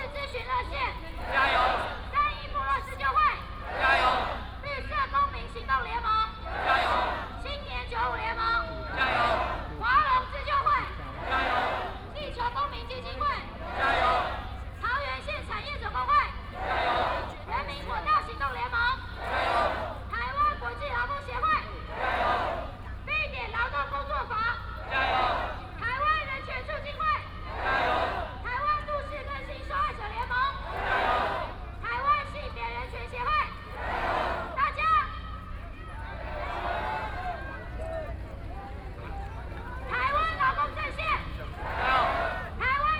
National Dr. Sun Yat-sen Memorial Hall - Workers protest
Workers protest, Sony PCM D50 + Soundman OKM II